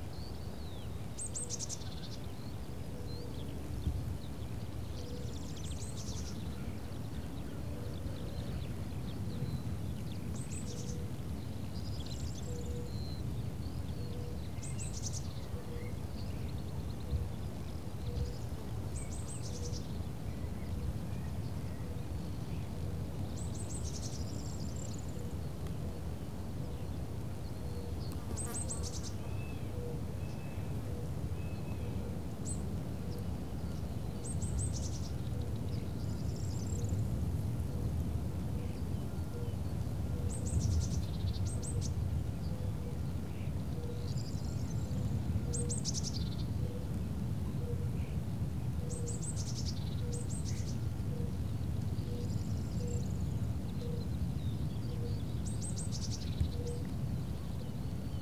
Morasko, Poligonowa Road - at the pond
Polska, European Union, 25 April 2013